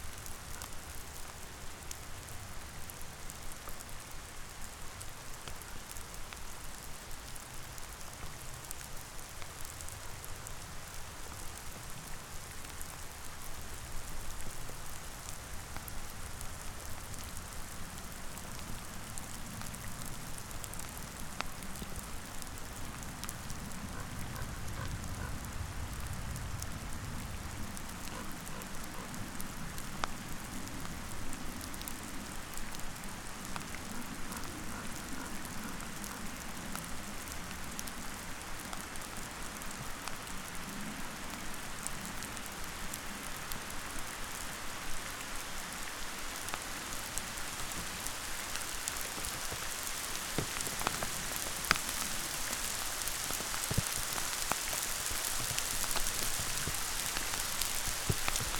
Šlavantas lake, Šlavantai, Lithuania - Hail shower on a frozen lake
Short hail shower on top of the frozen Šlavantas lake. Recorded with ZOOM H5.
Alytaus apskritis, Lietuva